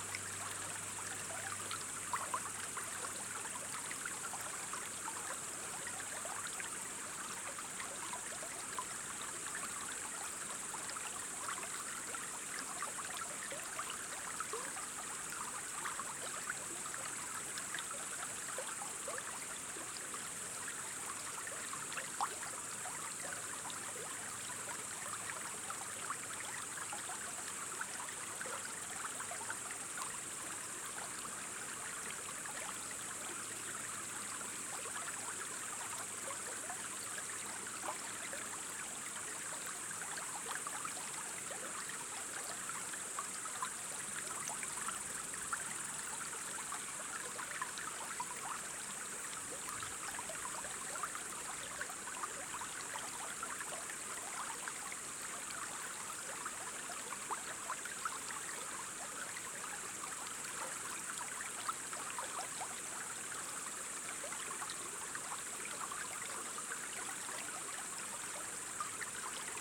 creek entering the sea, Estonia
small creek entering the baltic sea at the seashore
Pärnumaa, Estonia